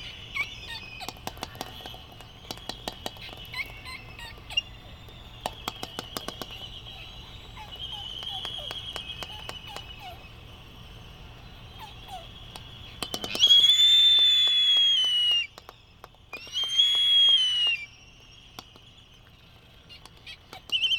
United States Minor Outlying Islands - Laysan albatross dancing ...
Sand Island ... Midway Atoll ... laysan albatross dancing ... calls from white terns ...Sony ECM 959 one point stereo mic to Sony Minidisk ... warm sunny breezy morning ... background noise ...